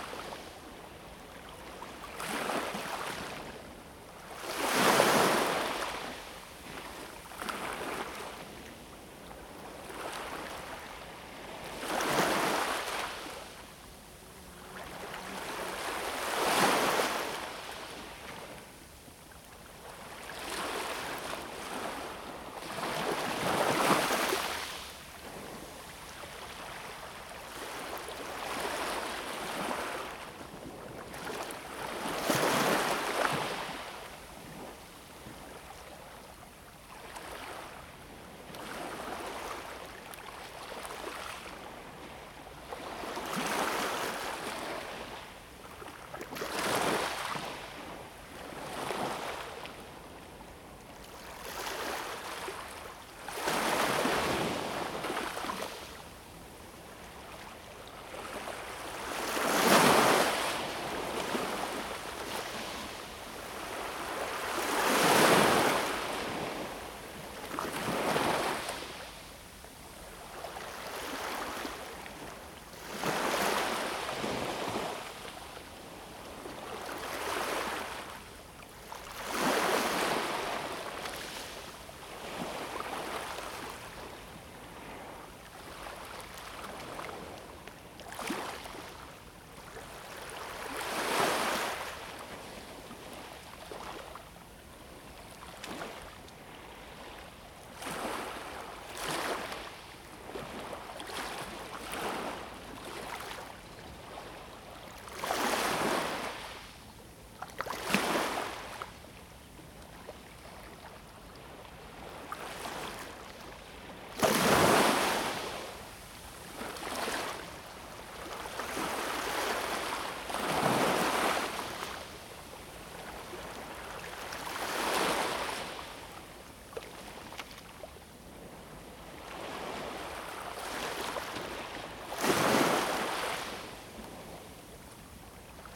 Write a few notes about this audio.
The sound of the sea in the morning recorded with Zoom H2n